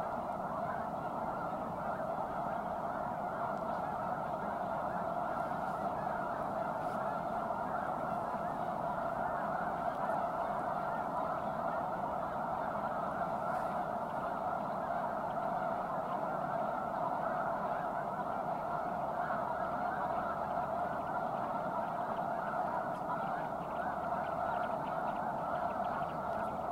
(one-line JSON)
{"title": "Bosque Del Apache Wildlife Refuge, New Mexico - Sandhill Cranes and Geese at Bosque Del Apache Refuge in New Mexico", "date": "2019-01-20 16:00:00", "description": "Wildlife refuge with 1000's of sandhill cranes and geese stopping by these ponds during their winter migrations. Recorded on a Zoom handheld.", "latitude": "33.80", "longitude": "-106.88", "altitude": "1371", "timezone": "America/Denver"}